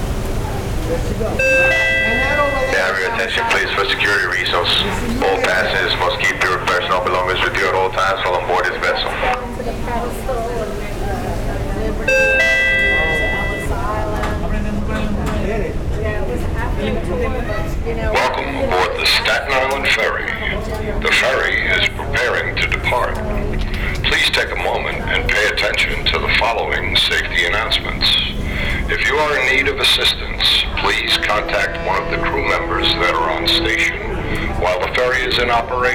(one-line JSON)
{"title": "Upper Bay - Safety Announcement, Staten Island Ferry", "date": "2018-06-03 10:33:00", "description": "Staten Island Ferry safety announcement.", "latitude": "40.70", "longitude": "-74.02", "timezone": "America/New_York"}